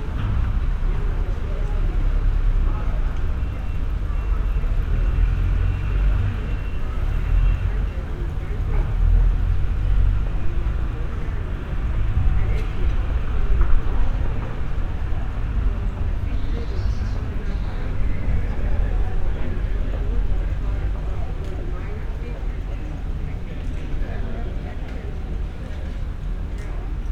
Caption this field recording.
pedestrians, two women talking, construction works in the distance, the city, the country & me: june 18, 2013